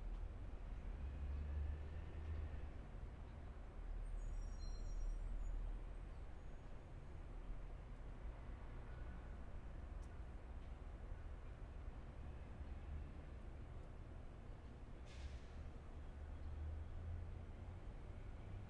6591 Seville Road - Bus stopping outside the Co-Op
Taken using a Zoom H4n in the courtyard of 6591 Seville listening to the bus pulling up to its stop.
Santa Barbara County, California, USA, 24 October 2019